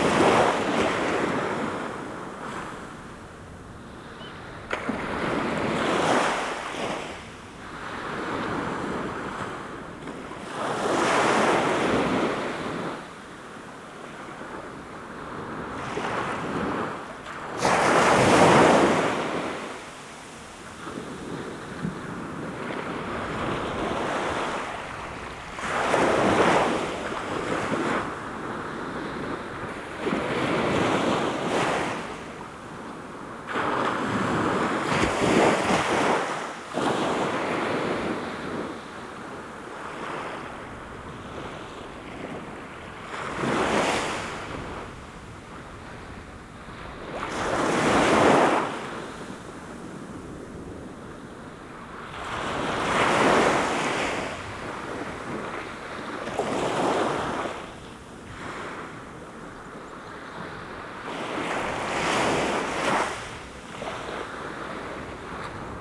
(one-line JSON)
{"title": "Scarborough, UK - Summer, North Bay, Scarborough, UK", "date": "2012-07-07 06:00:00", "description": "Binaural field recording, waves washing upon the shore", "latitude": "54.29", "longitude": "-0.41", "timezone": "Europe/London"}